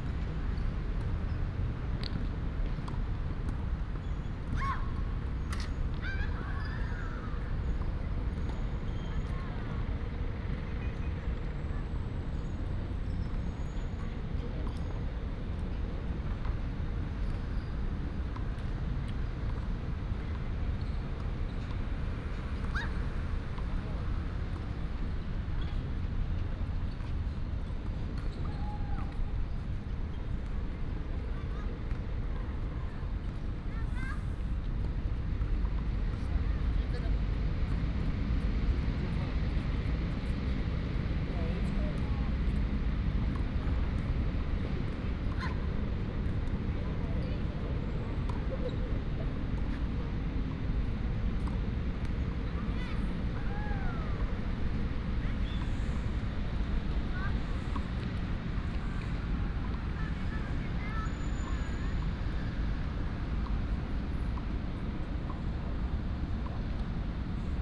國立臺灣大學, Taiwan - In the playground
In the playground, In the university